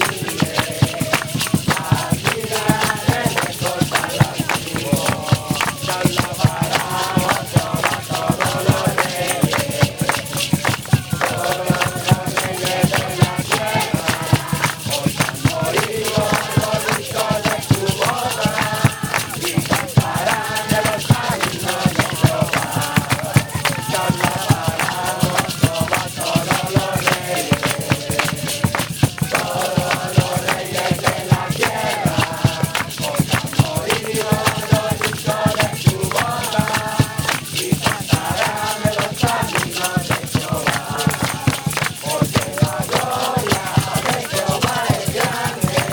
Malecón Maldonado, Iquitos, Peru - youth with mission evangelise and sing another song.
youth with mission evangelise and sing another song.
Loreto, Peru